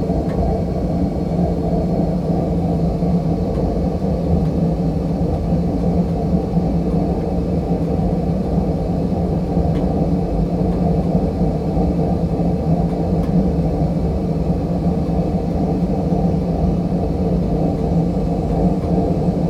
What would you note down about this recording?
hum and rattle of a big water heater.